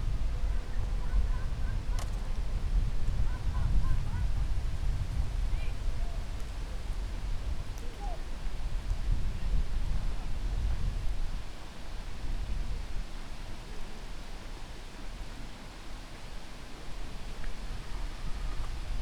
Vilnius, Lithuania, falling acorns
falling acorns in the park